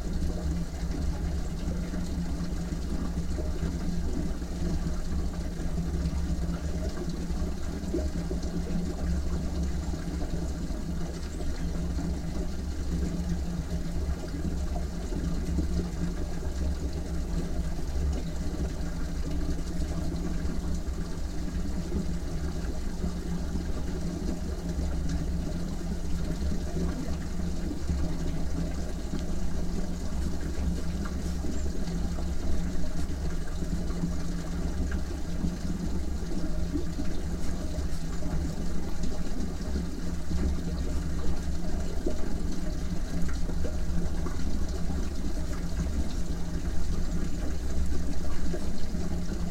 Kimbartiškė, Lithuania. at small dam
some small dam. omni mics placed on the waterline...deep lows comes from the road.